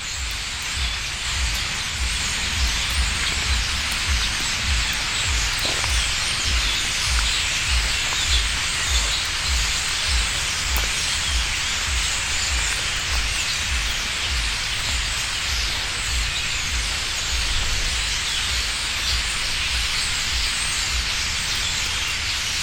a huge amount of birds gathering in the trees in autuum
soundmap d: social ambiences/ listen to the people in & outdoor topographic field recordings